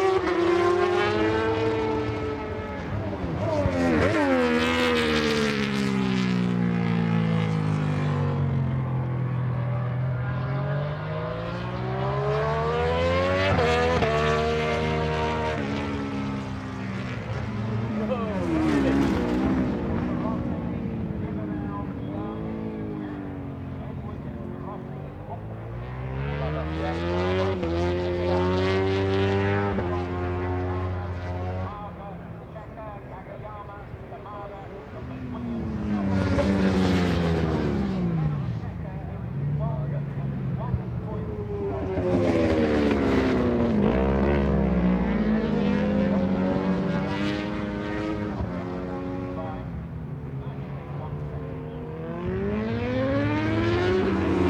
Castle Donington, UK - British Motorcycle Grand Prix 2003 ... moto grand prix ...
Free practice ... part two ... Melbourne Loop ... mixture 990cc four strokes an d500cc two strokes ...
13 July 2003, 10:20